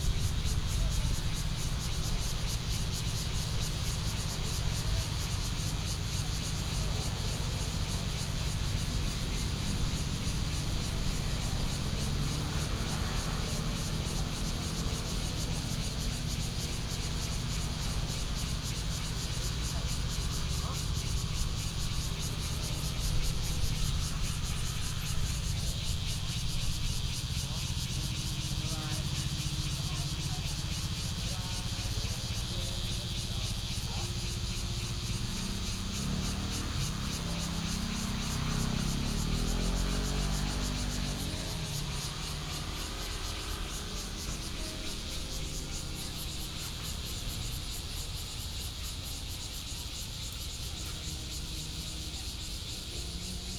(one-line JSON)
{"title": "Pinegarden 松園別館, Hualien City - Cicadas sound", "date": "2014-08-27 18:12:00", "description": "Cicadas sound, Traffic Sound, The weather is very hot\nBinaural recordings", "latitude": "23.98", "longitude": "121.62", "altitude": "32", "timezone": "Asia/Taipei"}